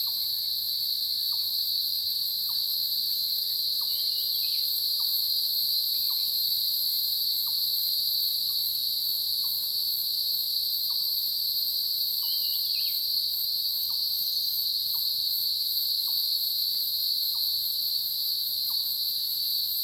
投64鄉道, 桃米里Puli Township - in the morning
in the morning, Bird sounds, Cicadas sound
Zoom H2n MS+XY
Puli Township, 水上巷, 8 June 2016